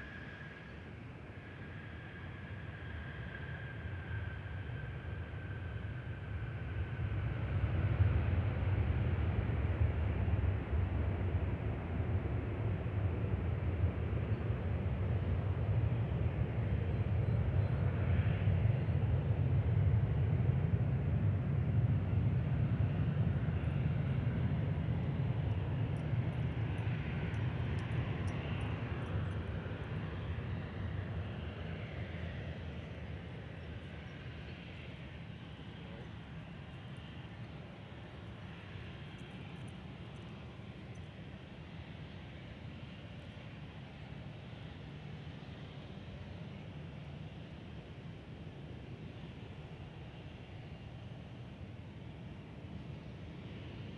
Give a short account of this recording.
The sounds of the dog park next to Minneapolis/Paul International Airport. This is a great spot to watch planes when aircraft are landing on runway 12R. In this recording aircraft can be heard landing and taking off on Runway 12R and 12L and taking off on Runway 17. Some people and dogs can also be heard going by on the path.